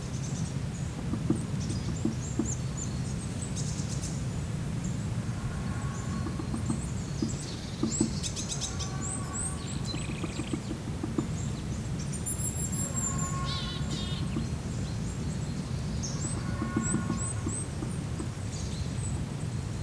Wigierski Park Narodowy, Piertanie, Krasnopol, Polen - Piertanie, Wigierski Park Narodowy, Suwałki - woodpecker near a clearing
Piertanie, Wigierski Park Narodowy, Suwałki - woodpecker near a clearing. Forest ambience, birds and crickets. [I used Olympus LS-11 for recording]